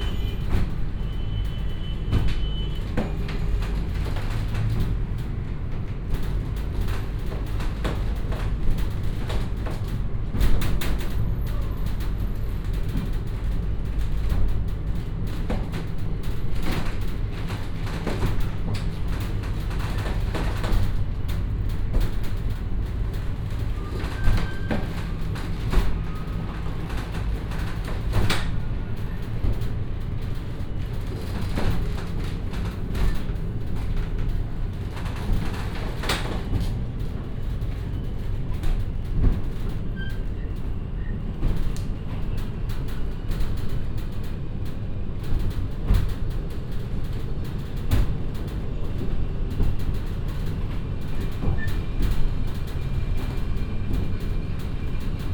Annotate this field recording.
ride in one of the many elevators of Valparaiso, at Artilleria.